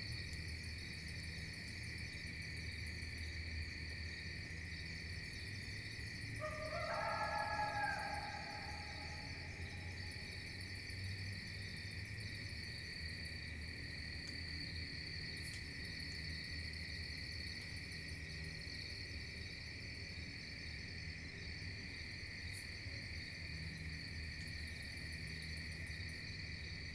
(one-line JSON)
{"title": "Edward G Bevan Fish and Wildlife Management Area, Millville, NJ, USA - distant coyote", "date": "2009-08-12 02:00:00", "description": "A coyote soloed in the distance as I observed the Perseid meteor shower. (fostex fr-2le; at3032)", "latitude": "39.34", "longitude": "-75.07", "altitude": "19", "timezone": "America/New_York"}